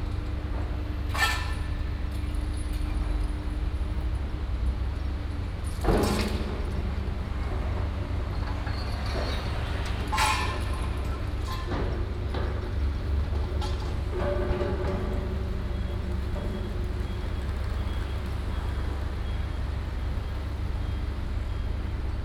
At the station square, Construction sound